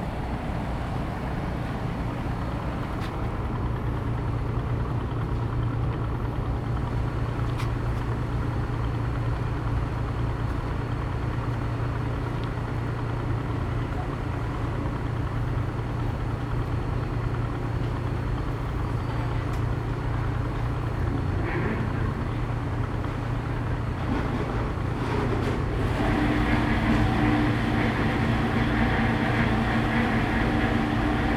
In the fishing port
Zoom H2n MS+XY